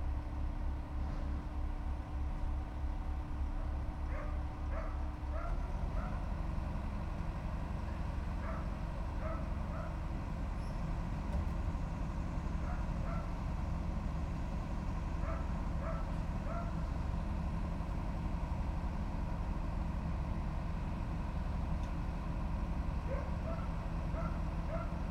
405 N Drake Avenue - 405 N Drake Ave., house demolition
demolition of Shirleys house, abandoned since 2005, burnt out 2010, my dogs, Sophie and Shirley barking
IL, USA, 17 July 2010